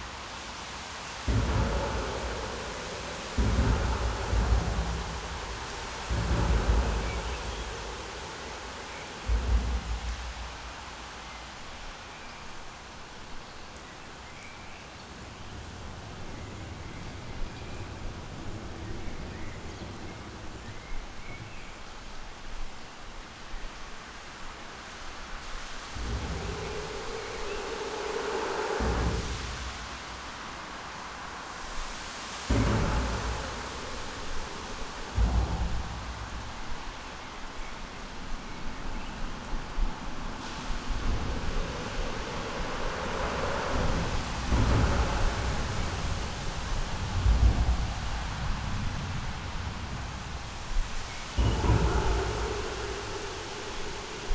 Kiefholzbrücke, Berlin - traffic under bridge, rain
traffic heard under bridge (Kiefholzbrücke)
(Sony PCM D50 internal mics 120°)